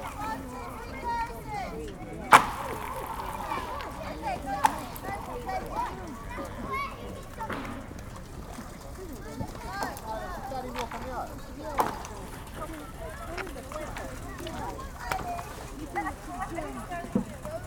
Viaduct Pond, Hampstead, London - Frozen Pond
Hampstead Heath frozen pond, kids playing with the ice, breaking ice, ice sounds, pulling a dog from the water
England, United Kingdom